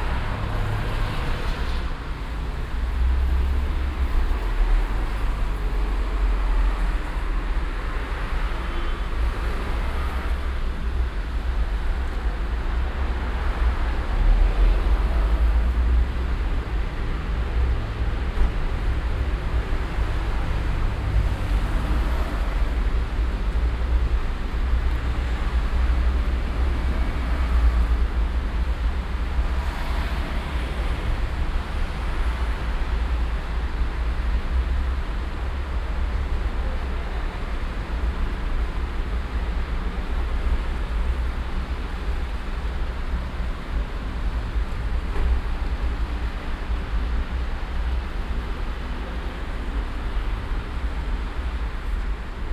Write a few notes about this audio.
traditionelles glockenspiel und verkehrsgeräusche am 4711 gebäude, früher nachmittag, soundmap köln/ nrw, project: social ambiences/ listen to the people - in & outdoor nearfield recordings